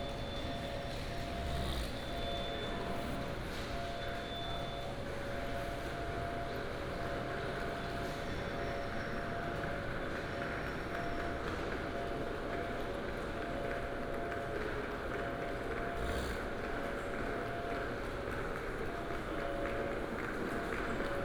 In the station hall, Station broadcast message sound, Luggage, lunar New Year
Binaural recordings, Sony PCM D100+ Soundman OKM II